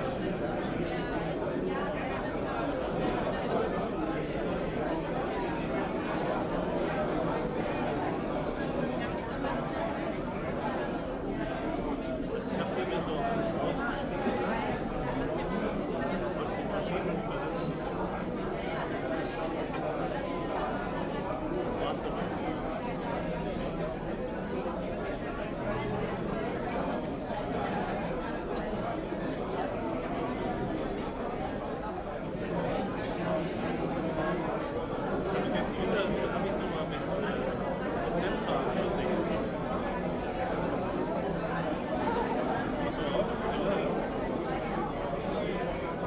In a packed restaurant the crowd is rather talking than eating.